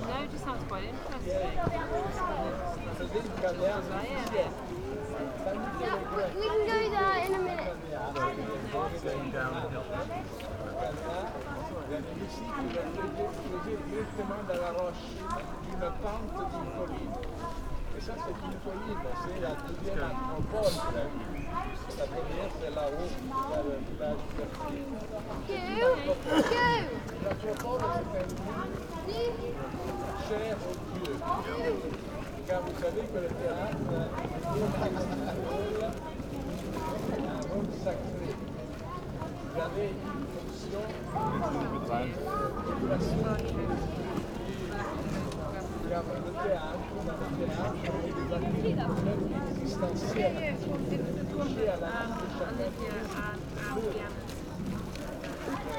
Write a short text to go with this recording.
ancient amphitheatre on a sunday afternoon